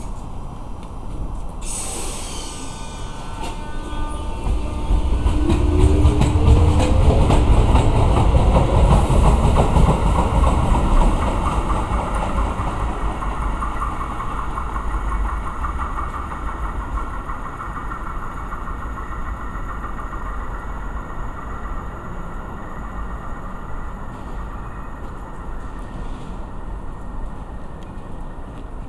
Prestwich, Manchester, Tram Station
The Tram Station at Prestwich, Manchester.
Manchester UK